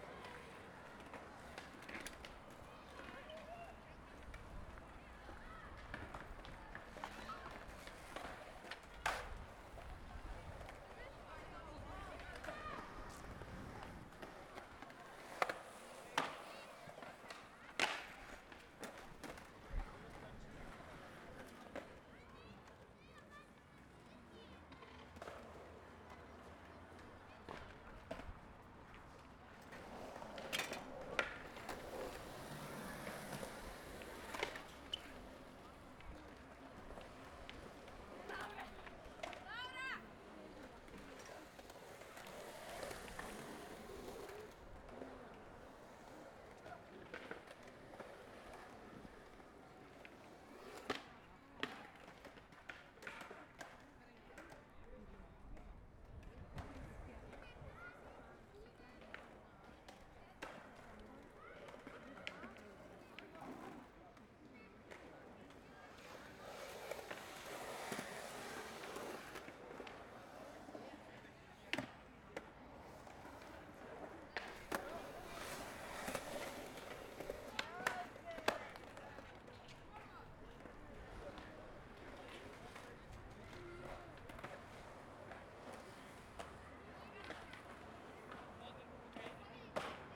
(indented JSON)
{
  "title": "Stadspark, Antwerpen, België - Soundwalk in Stadspark Antwerpen",
  "date": "2013-04-01 16:30:00",
  "description": "Soundwalk through Stadspark in Antwerp. I started my walk close to a skatepark and stayed there for a few minutes. Then I walked through the playground to the lake where they were feeding ducks and other birds. At the end I walked to the street. Only a 300m walk with different sound worlds. Also remarkable is a lot of languages: From \"Plat Antwaarps\" to Yiddish. It was recorded with an XY H4 stereo microphone. I used my scarf as wind protection",
  "latitude": "51.21",
  "longitude": "4.42",
  "altitude": "14",
  "timezone": "Europe/Brussels"
}